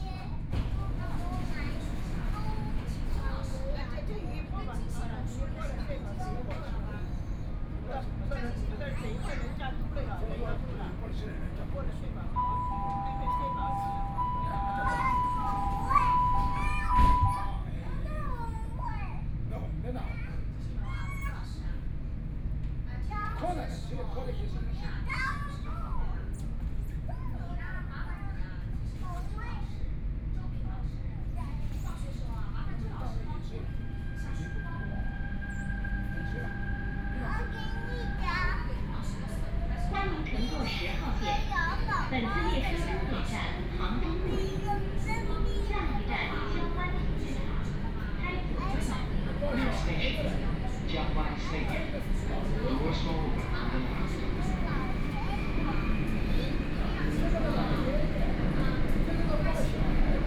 Yangpu District, Shanghai - The elderly and children
The elderly and children, from Xinjiangwancheng station to Wujiaochang station, Binaural recording, Zoom H6+ Soundman OKM II
Shanghai, China, 25 November 2013, 12:52